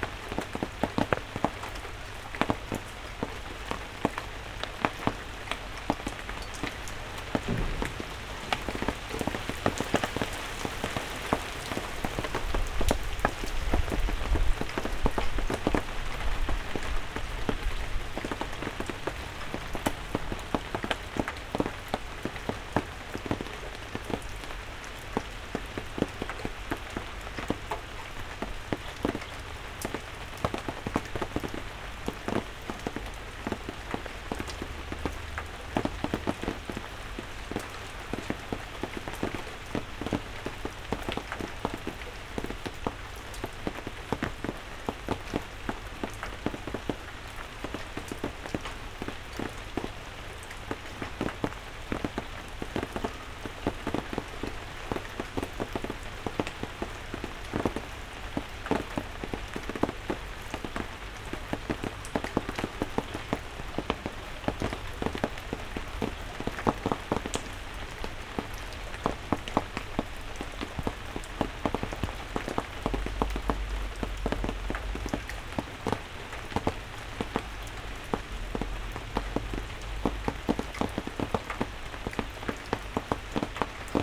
berlin, sanderstraße: vor restaurant - the city, the country & me: in front of greek restaurant
under the awning of the restaurant
the city, the country & me: june 5, 2012
99 facets of rain
Berlin, Germany, June 2012